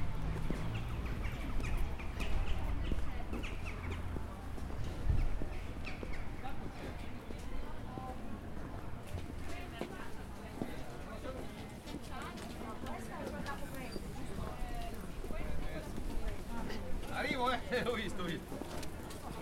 luino, lago maggiore, mercato, markt, italien, marktbetrieb
Luino Varese, Italien